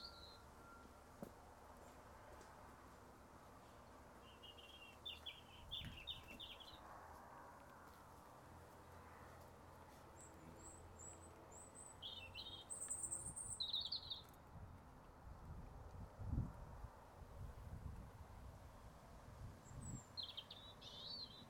Quiet morning in the Isle of Skye. The birds chirp in funny ways, the wins blows slower than the storm of the day before. Rec with Tascam DR-05.